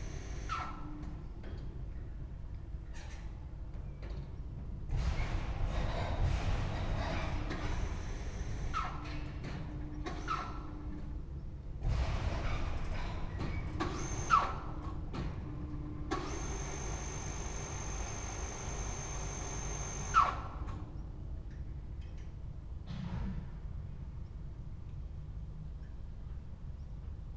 overhead crane moving rails at the outside area of a track construction company
the city, the country & me: august 4, 2014